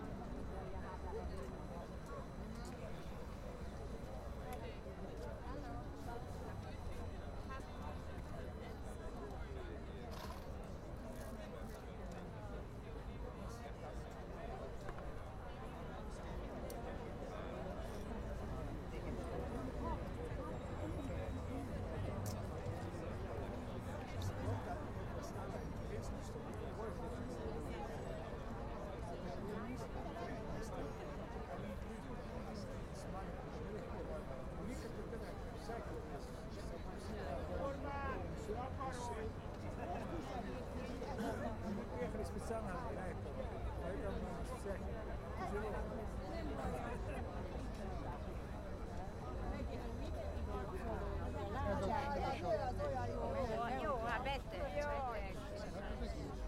Atmosphere before Demonstration Budapest - Atmosphere before Demonstration
A guy called Ahmed was convicted for ten years because of 'terrorism'. He spoke through a megaphone during refugees crossed the former closed border to Hungary and threw three objects, but it is unclear if he hit someone. Named after the village 'Racoszi' the eleven imprisoned refugees are supported by a campaign of the group MIGSZOL. Recorded with a Tascam DR-100
Rákóczi út, Hungary